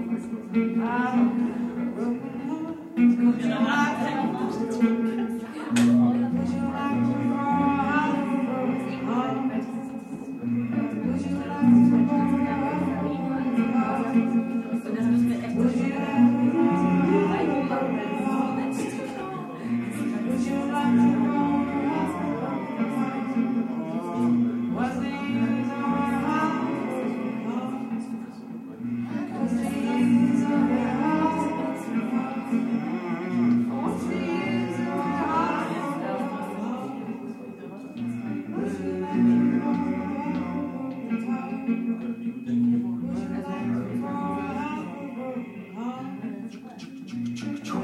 Concert at Der Kanal, Weisestr. - Der Kanal, Konzert mit Polly Tikk
Suddenly in the garage: An amplifier, microphones, a guitar, drums, a delay and also - red wine pave the way for some good vibes and a cross-continental connection. Polly Tikk visits DER KANAL for an unexpectedly beautiful jam session.
Berlin, Deutschland, European Union, 25 October 2011